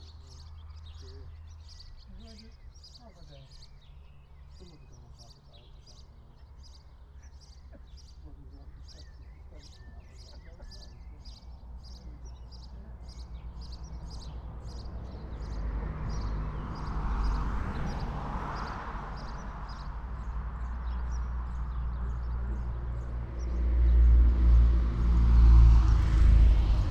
white rose classic rally run by malton car club ... xlr sass on tripod to zoom h5 ... extended edited recording ... lots of traffic ... m'bikes ... lorry ... farm traffic ... cyclists ... and some of the seventy entrants from the car rally ... lots of waving ... bird song ... calls ... house sparrow ... blackbird ... swallow ...